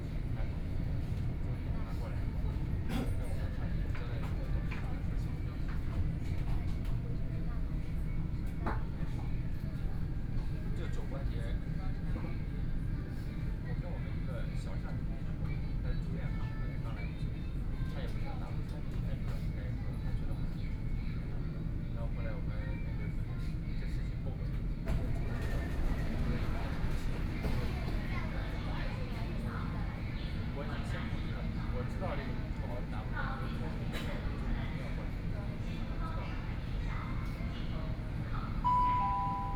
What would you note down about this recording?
from Youdian Xincun station to Wujiaochangstation, Binaural recording, Zoom H6+ Soundman OKM II